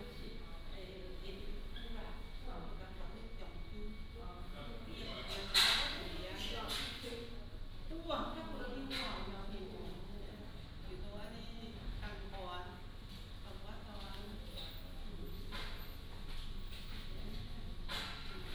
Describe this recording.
In the lobby of the train station